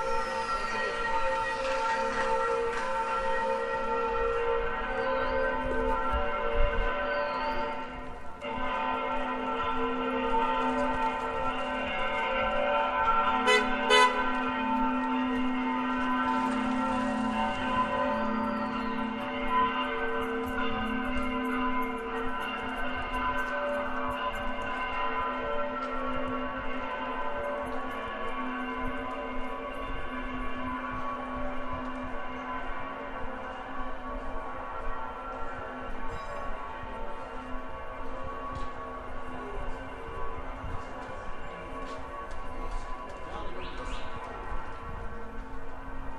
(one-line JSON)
{"title": ":jaramanah: :church bells from the tape: - twentynine", "date": "2008-10-14 10:06:00", "latitude": "33.50", "longitude": "36.33", "altitude": "677", "timezone": "Asia/Damascus"}